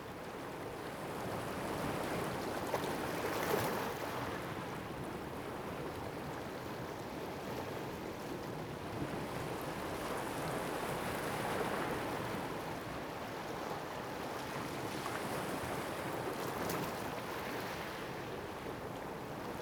椰油村, Koto island - sound of the waves
At the beach, sound of the waves
Zoom H6 +Rode Nt4